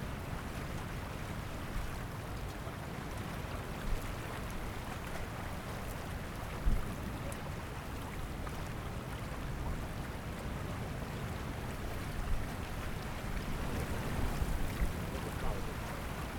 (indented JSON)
{
  "title": "Changhua, Taiwan - waves",
  "date": "2014-03-09 09:34:00",
  "description": "Strong winds, Sound waves, Zoom H6 MS",
  "latitude": "23.94",
  "longitude": "120.28",
  "timezone": "Asia/Taipei"
}